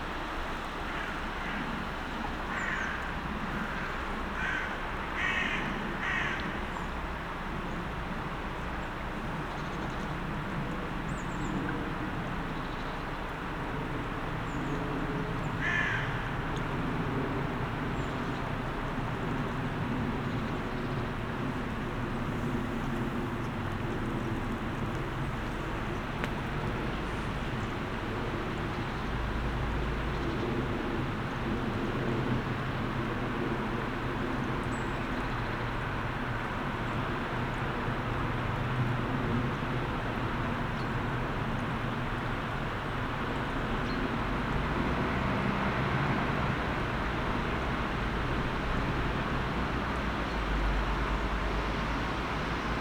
burg/wupper: parkplatz - the city, the country & me: parking lot
parking lot nearby the wupper river
the city, the country & me: november 27, 2013